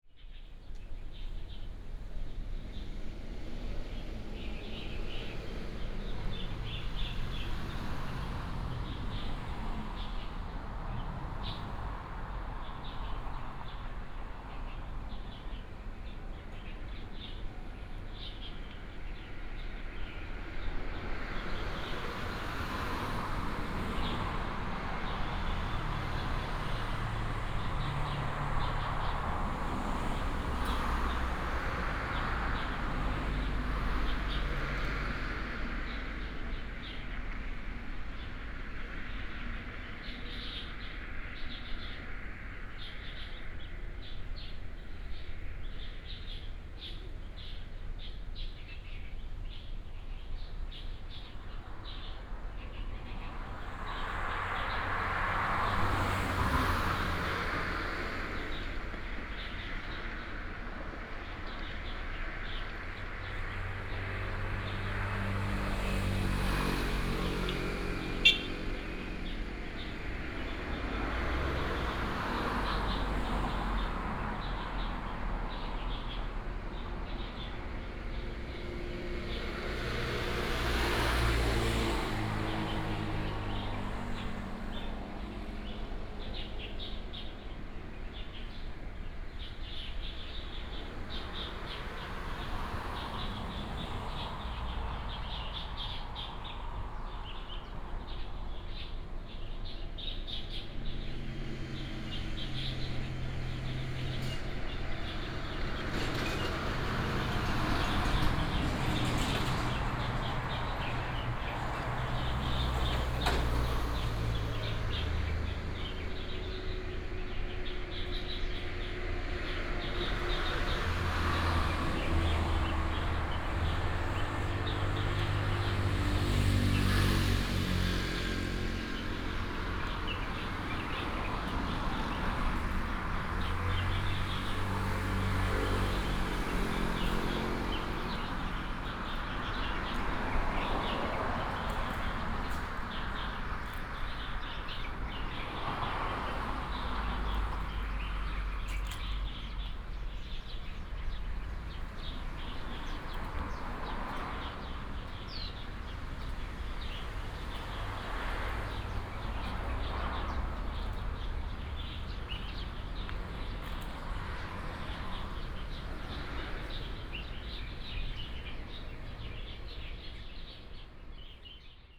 銅鑼鄉尖豐公路, Miaoli County - Bird call
A small temple next to the road, Under the tree, Bird call, traffic sound, Binaural recordings, Sony PCM D100+ Soundman OKM II